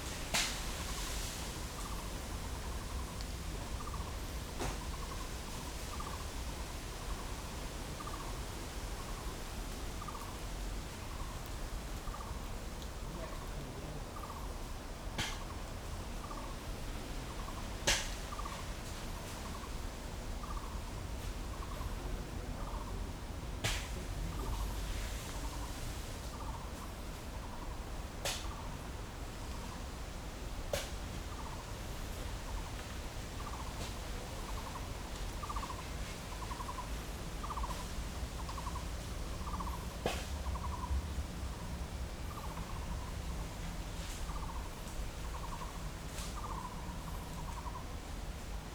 Nangang District, Taipei - Afternoon in the mountains
Afternoon in the mountains, Rode NT4+Zoom H4n
台北市 (Taipei City), 中華民國, 6 March 2012